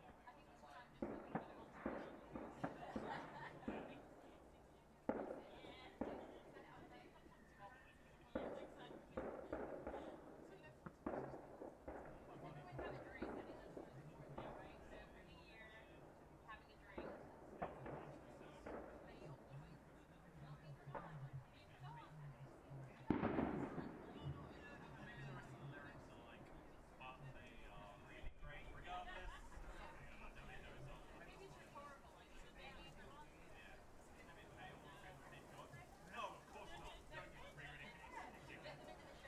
Gladwin Rd, Colchester, UK - New Years Eve Ambience, 2018-19
Recording from my friends balcony in a street in Colchester, listening to the fireworks and people singing in the surrounding gardens etc. Recorded with Mixpre6 and USI Pro - original recording was 2 hours long but I decided to cut to the main part, as the rest was just silence or distant talking
1 January 2018